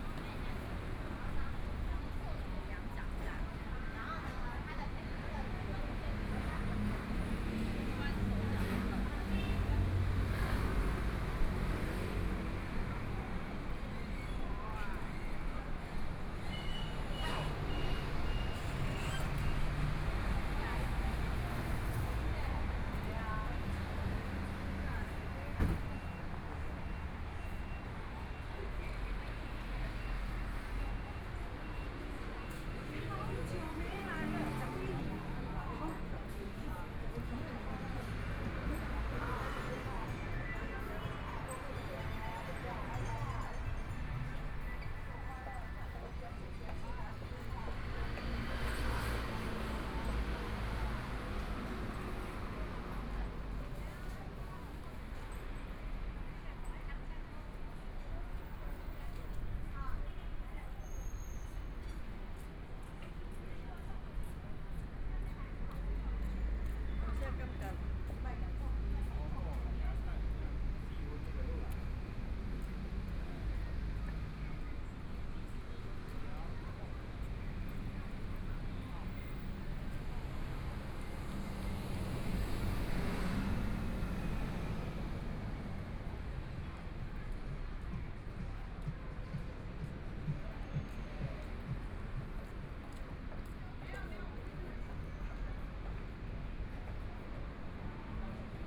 台北市中山區 - on the road
Walking on the road （ZhongShan N.Rd.）from Nong'an St. to Jinzhou St., Traffic Sound, Binaural recordings, Zoom H4n + Soundman OKM II